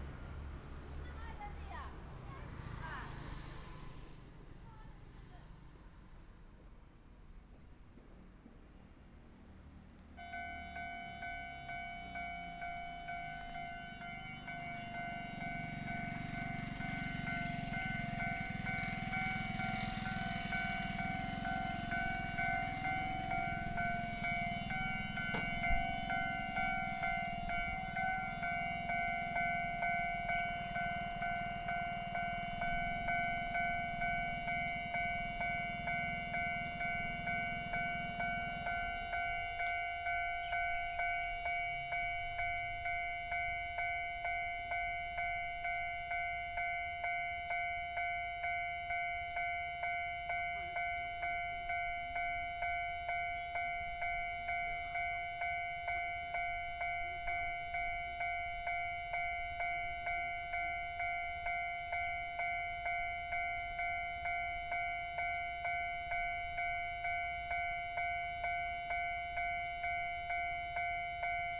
Mingde St., Huatan Township - in the railroad crossing
in the railroad crossing, The train runs through
Changhua County, Taiwan, 18 March, 12:14